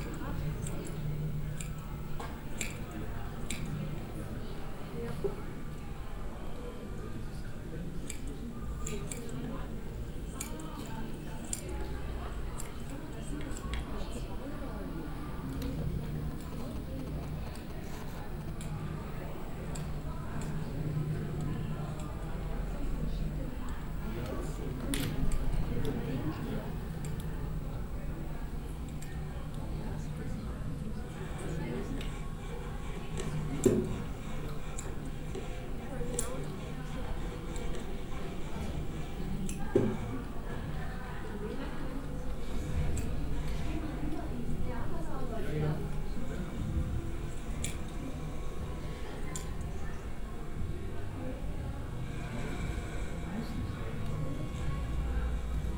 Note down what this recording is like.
at an media exhibition at düsseldorf malkasten building, soundmap nrw - social ambiences and topographic field recordings